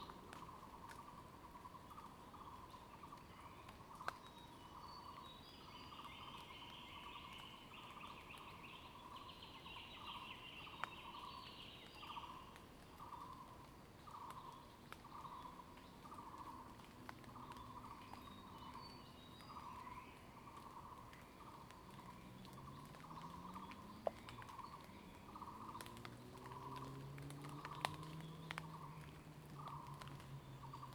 水上, 桃米里, Puli Township - in the woods
morning, in the woods, Bird sounds, Frogs chirping, Water droplets fall foliage
Zoom H2n MS+XY